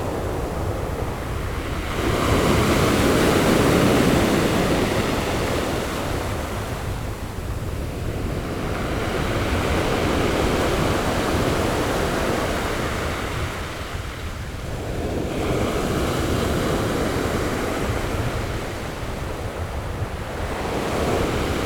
新城村, Xincheng Township - the waves
Sound of the waves, The weather is very hot
Zoom H6 MS+Rode NT4